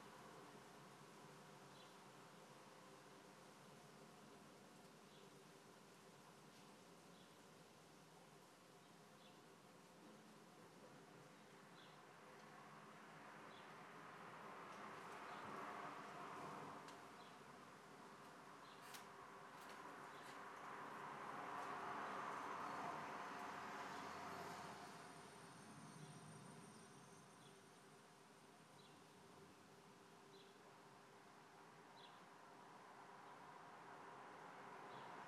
Rijeka, Propuh
world listening day
Rijeka, Croatia